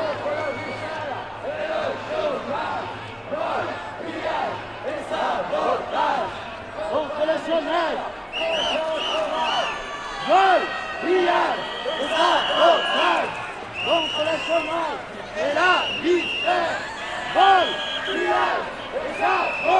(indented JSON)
{
  "title": "Pl. Bellecour, Lyon, France - Lyon 1995",
  "date": "1995-11-24 10:00:00",
  "description": "Lyon 1995 - Manifestations contre le plan \"Juppé\" - réforme des retraites et de la Sécurité Sociale\nLes grèves de 1995 en France contre le plan Juppé de 1995 furent à leur époque les plus importantes depuis celles de Mai 682. Le nombre moyen annuel de jours de grève en 1995 a été six fois supérieur à celui de la période 1982-19943. Du 24 novembre au 15 décembre, des grèves d'ampleur ont eu lieu dans la fonction publique et le secteur privé contre le « plan Juppé » sur les retraites et la Sécurité sociale. Le mouvement social de l'automne 1995, souvent réduit à la grève des transports publics, très visible et fortement médiatisée, a concerné également les grandes administrations (La Poste, France Télécom, EDF-GDF, Éducation nationale, secteur de la santé, administration des finances, ...).",
  "latitude": "45.76",
  "longitude": "4.83",
  "altitude": "172",
  "timezone": "Europe/Paris"
}